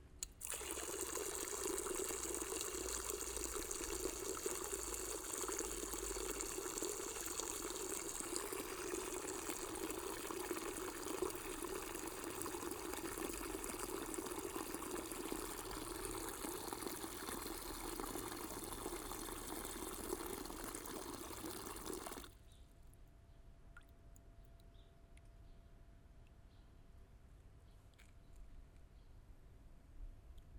Drinking Fountain at Parc de Monterols in Barcelona
Barcelona, Spain